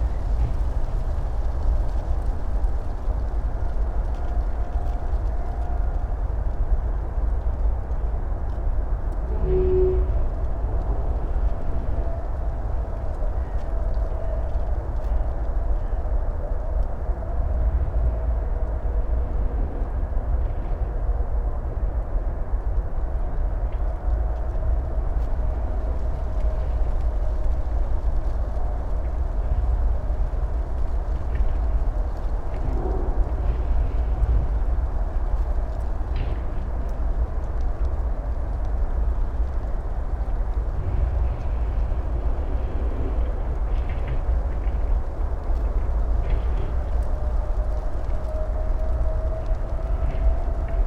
Tempelhofer Feld, Berlin - oak tree, leaves, wind and drone
leaves of an oak tree in the wind, intense drone from the autobahn / motorway because of wind from south west.
(PCM D50, Primo EM172)
Berlin, Germany, 17 December 2013, ~14:00